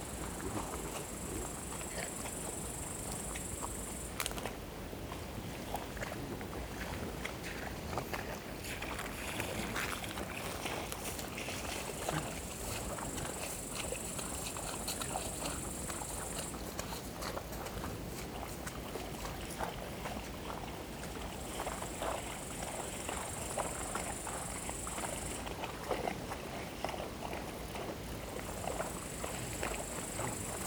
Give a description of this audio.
A herd of light brown cows in a wet waterlogged field, squelching as they walked and ate. Two walkers were filming, murmuring occasionally. It is windy in the trees behinf me. A very small plane drones above, almost resonating in the landscape.